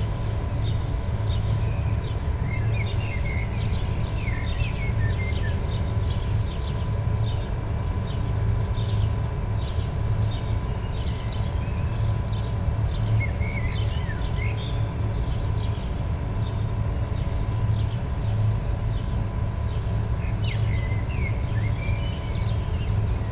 Klingenstr., Plagwitz, Leipzig, Germany - factury ventilation, hum
Ventilation drone from a building right next to the Plagwitz Wagenburg. Sound of a Siemens turbine factory, which, according to people of the laager, can be heard all over the area.
(Sony PCM D50, DPA4060)